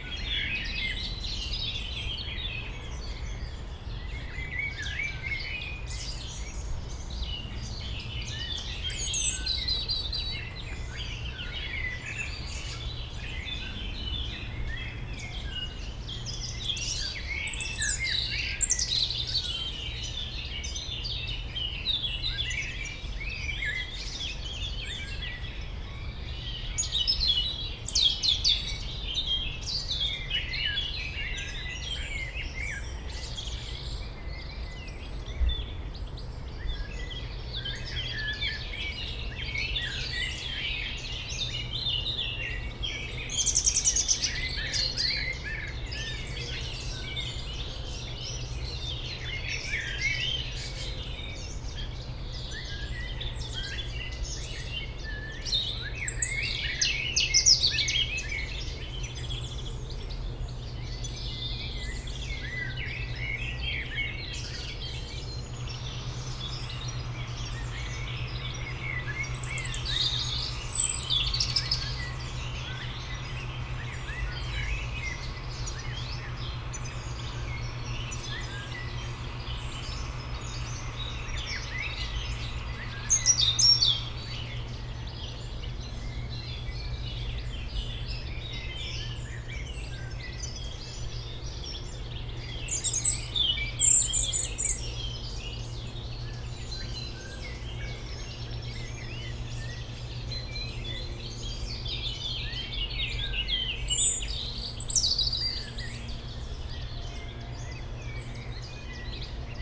Dans le bois de Memard 73100 Aix-les-Bains, France - rouge gorge
Un rouge gorge au premier plan entouré de merles et autre oiseaux dans ce petit bois près du Jardin Vagabond, en zone péri urbaine, arrière plan de la rumeur de la ville et des bateaux sur le lac.
1 April, 11:30